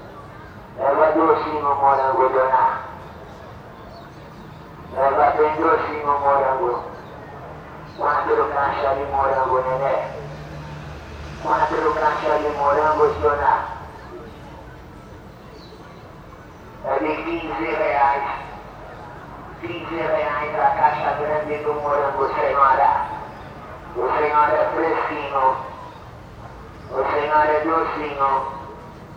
Aclimação, São Paulo - Seller from his truck announcing some strawberries
From the window of the flat, recording of a seller of strawberries above the park Aclimaçao, Sao Paulo.
Recorded by a binaural Setup of 2 x Primo Microphones on a Zoom H1 Recorder